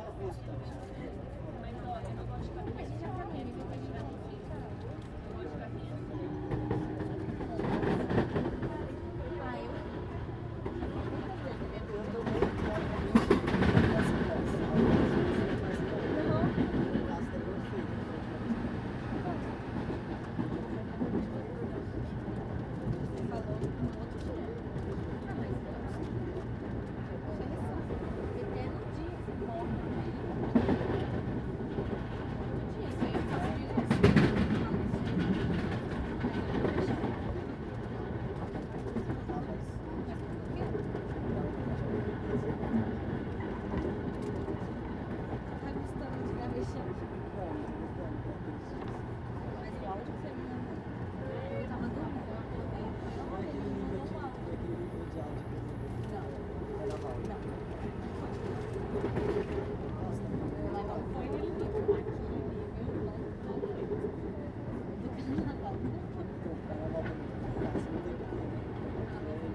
Av. Auro Soares de Moura Andrade - Barra Funda, São Paulo - SP, 01156-001, Brasil - interior do vagão de trêm
captação estéreo com microfones internos
- Barra Funda, São Paulo - SP, Brazil